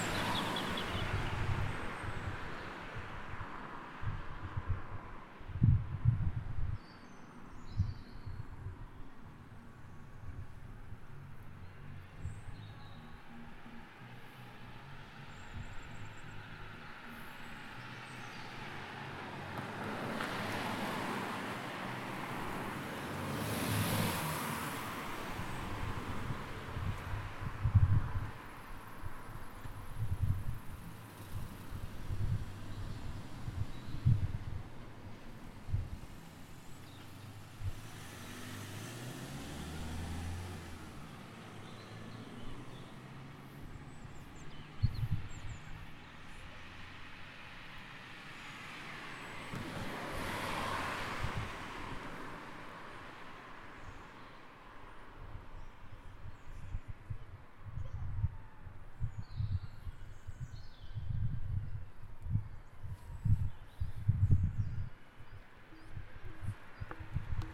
Penn Grove Rd, Hereford, UK - Birds battling traffic
Birds struggling to be heard over traffic.
England, United Kingdom, 28 December 2019, 17:00